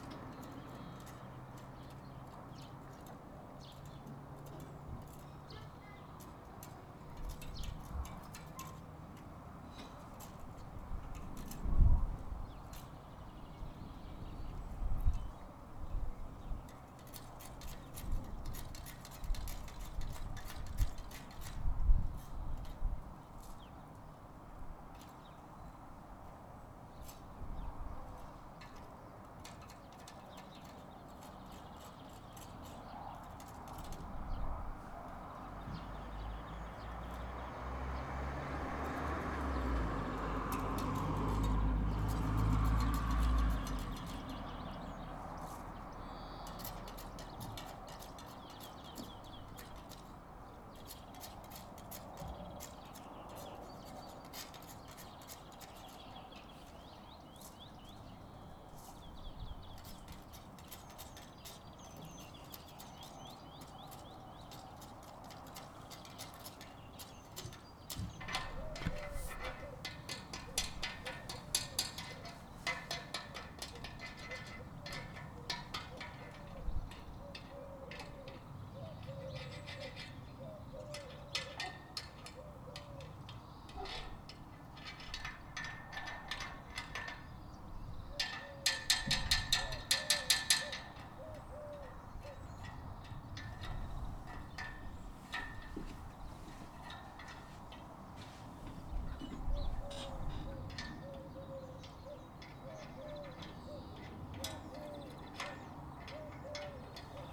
St Ovins Green, Ely - gate percussion
recorded from an upstairs window as neighbour scraped clean an iron gate for re-painting
Cambridgeshire, UK, 21 May 2007, ~15:00